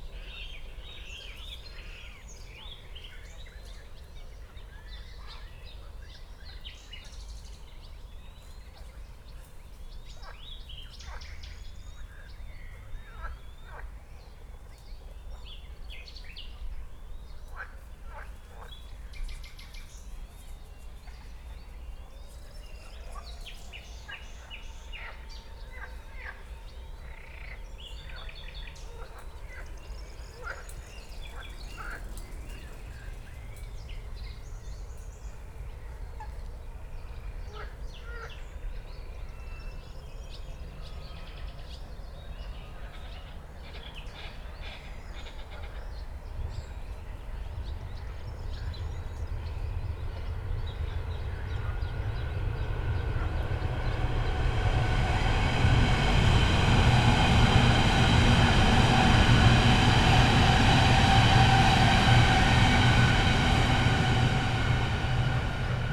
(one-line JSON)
{"title": "Moorlinse, Buch, Berlin - spring evening ambience /w S-Bahn", "date": "2020-06-16 21:15:00", "description": "Moorlinse pond, late spring evening ambience, S-Bahn trains passing by very near\n(Sony PCM D50, DPA4060)", "latitude": "52.63", "longitude": "13.49", "altitude": "54", "timezone": "Europe/Berlin"}